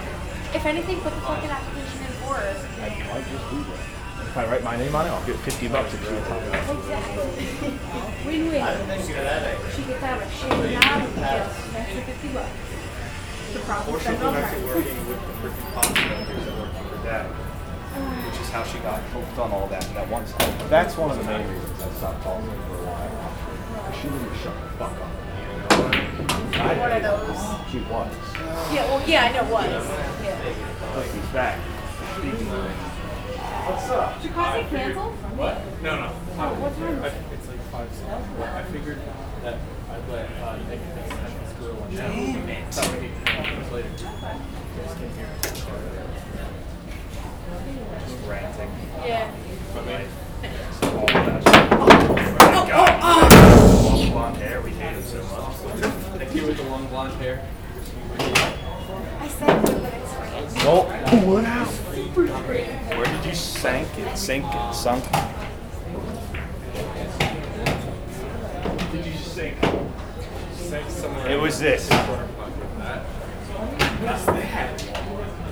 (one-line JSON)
{"title": "Student Center, The College of New Jersey, Pennington Road, Ewing Township, NJ, USA - Game Room", "date": "2014-02-28 17:00:00", "description": "Recording of the chatter in the game room at the TCNJ Stud.", "latitude": "40.27", "longitude": "-74.78", "timezone": "America/New_York"}